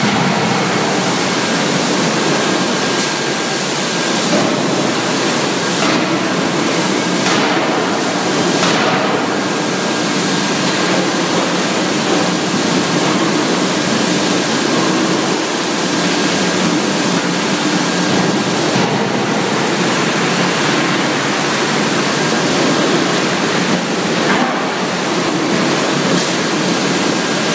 Kuźnia Polska, ul. Górecka, Skoczów, Pogórze, Poland - Heavy Metal Forge Factory
Souds of Forge Factory arond and inside. Recordded on iPhone 5.